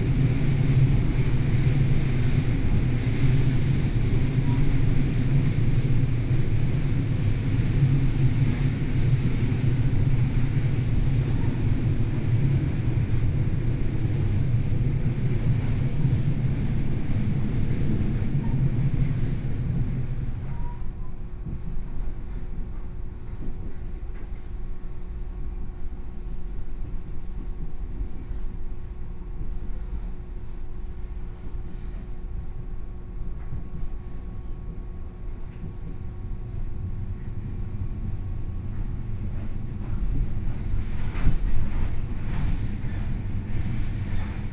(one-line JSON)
{"title": "Su'ao Township, Yilan County - Local Train", "date": "2013-11-07 13:18:00", "description": "Yilan Line, Local Train, from Su'ao Station to Su'aoxin Station, Binaural recordings, Zoom H4n+ Soundman OKM II", "latitude": "24.60", "longitude": "121.84", "altitude": "26", "timezone": "Asia/Taipei"}